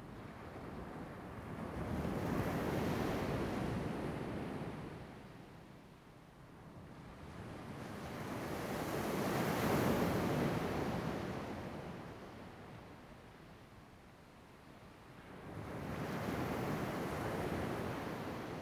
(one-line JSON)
{"title": "椰油村, Koto island - sound of the waves", "date": "2014-10-28 16:05:00", "description": "In the beach, Sound of the waves\nZoom H2n MS +XY", "latitude": "22.05", "longitude": "121.52", "altitude": "9", "timezone": "Asia/Taipei"}